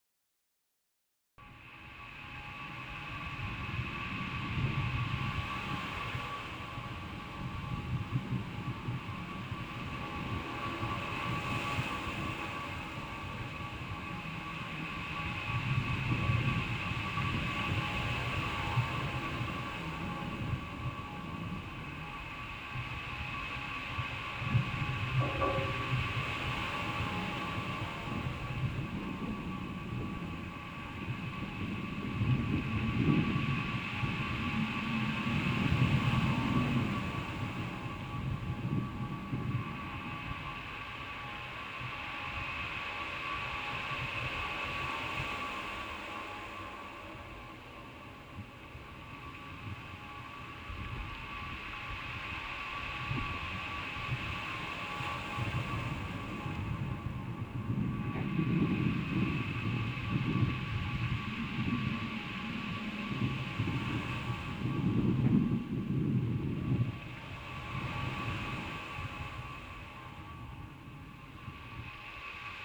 Portbou, Girona, España - Olas por un tubo
Olas del mar y viento grabado por un micro de contacto.
Catalunya, España, European Union, 5 March 2012, 01:31